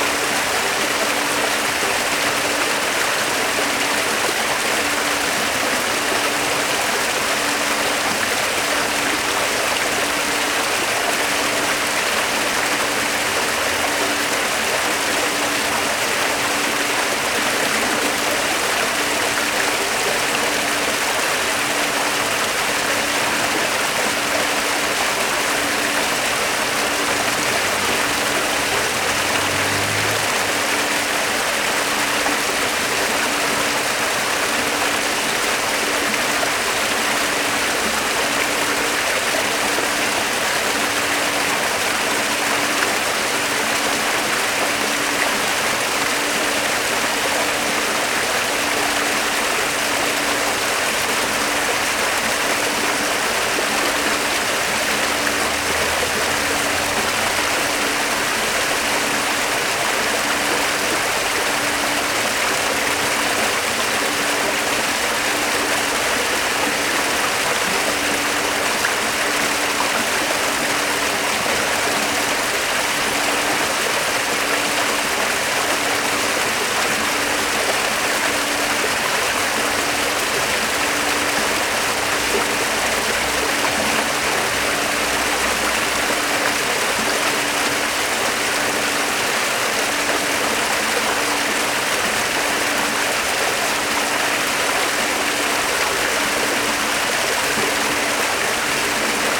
Orléans, fontaine Place d'Arc (bas gauche)
Fontaine en escalier à Place d'Arc, Orléans (45-France)
(bas gauche)